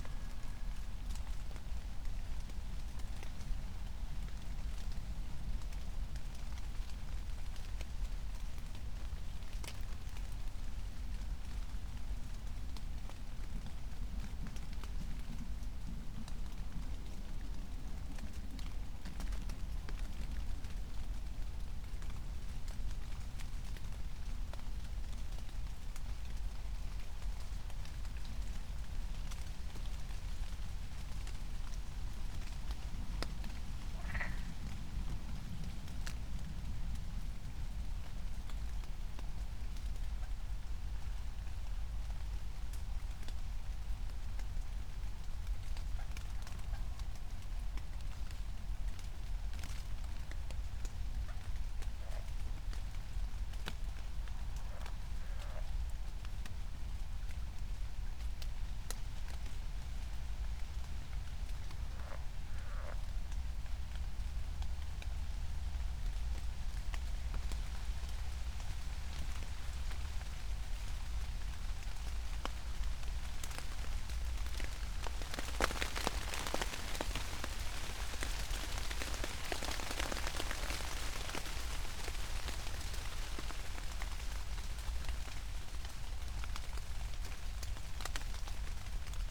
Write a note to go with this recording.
3:00 drone, still raining, a siren in the distance